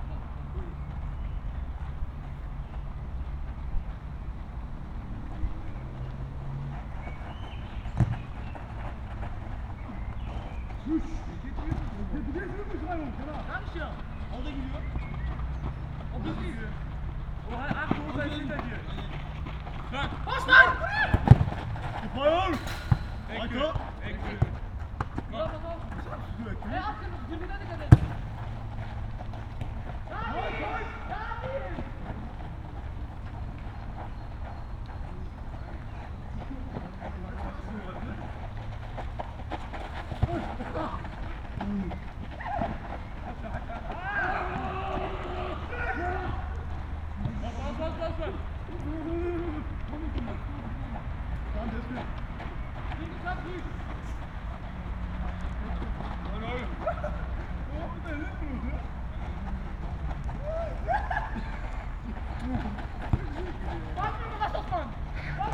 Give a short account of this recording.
soccer area behind the Kalkberg, an artificial hill created out of the waste of a chemical plant. youngsters playing, monday evening. (tech: Olympus LS5, Primo EM172)